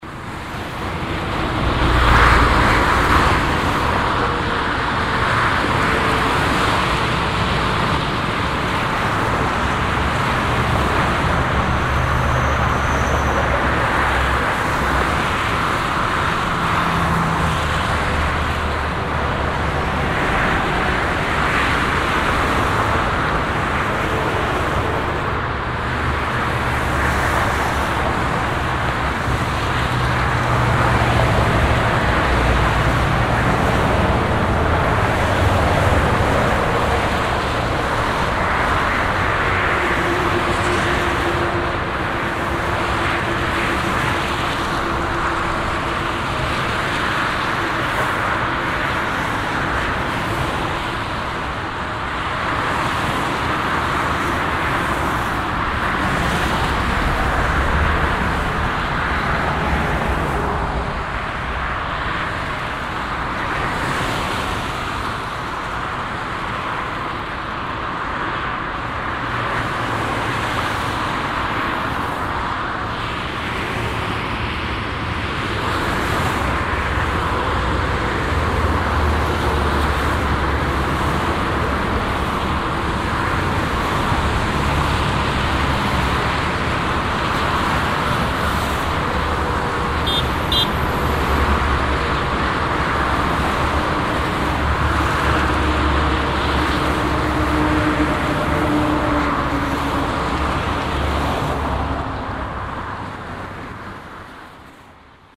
erkrath, hochdahler strasse, autobahn, a3
soundmap: erkrath/ nrw
verkehr auf der A3 aufgenommen morgens im märz 2007 auf einer kleinen autobahnbrücke
project: social ambiences/ - in & outdoor nearfield recordings